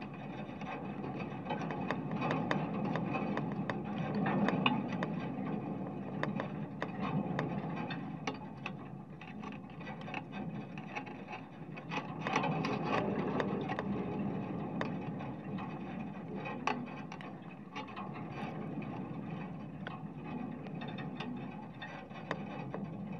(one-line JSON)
{"title": "Biliakiemis, Lithuania, barbed wire, winter - barbed wire, winter", "date": "2018-02-04 14:30:00", "description": "contact microphones on a barbed wire, winter, wind, snow", "latitude": "55.45", "longitude": "25.69", "altitude": "169", "timezone": "Europe/Vilnius"}